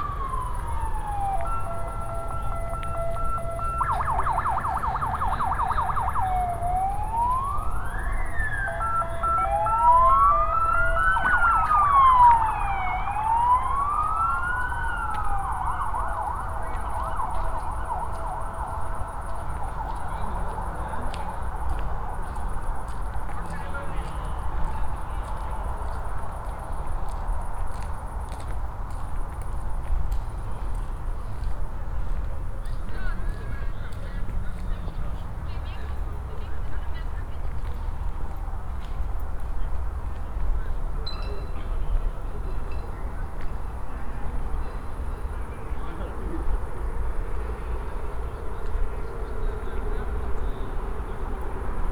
Poznan, Jana III Sobieskiego housing estate - building 21
(binaural) evening wander around vast housing estate. in front of building 21. it's warm. people sit on benches and echos of their conversations reverberate off the sides of high buildings. different sounds can be heard form the myriad of windows. coughs, groans, laughs, talks, radios. passing by a broken intercom. at the end of the recording i'm crossing a street and walk into a fright train that passes about two meters in front of me. (sony d50 + luhd pm01bin)